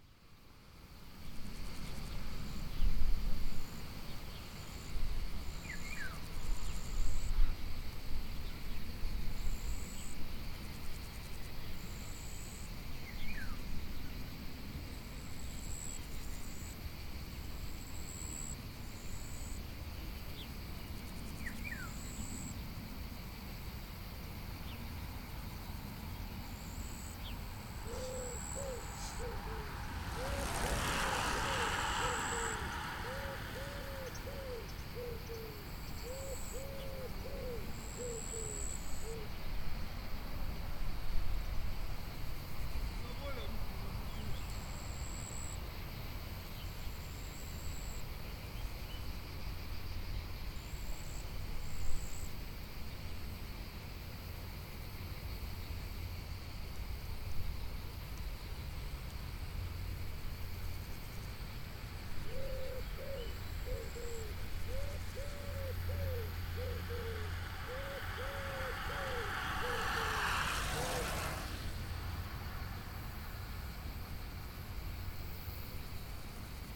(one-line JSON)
{"title": "Via Rhôna, Vions, France - ça roule", "date": "2022-07-10 11:55:00", "description": "Au bord de la via rhôna, quelques insectes dans les talus, un loriot dans un bosquet, cigales en fond, quelques cyclistes de passage, sous l'ardent soleil et un léger vent de Nord.", "latitude": "45.83", "longitude": "5.80", "altitude": "236", "timezone": "Europe/Paris"}